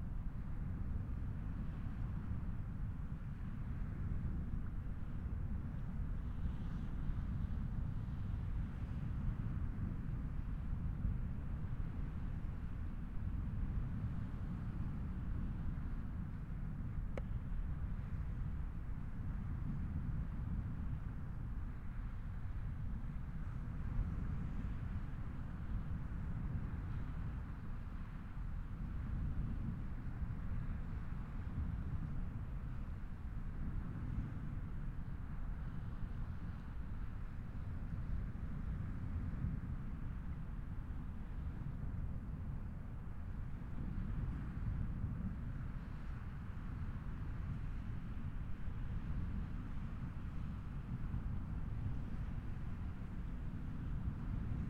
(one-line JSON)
{"title": "Poste-de-secours-Piemansons-Plage, Camargue, Arles, Frankreich - The sea and an army helicopter", "date": "2021-10-19 13:40:00", "description": "At this time of the year only few people around. Some fishermen (the dog of one can be heard). An army helicopter passing overhead, probably on patrol along the coastline. Binaural recording. Artificial head microphone set up in the windshade of the Poste-de-secours building. Microphone facing west. Recorded with a Sound Devices 702 field recorder and a modified Crown - SASS setup incorporating two Sennheiser mkh 20 microphones.", "latitude": "43.35", "longitude": "4.78", "altitude": "1", "timezone": "Europe/Paris"}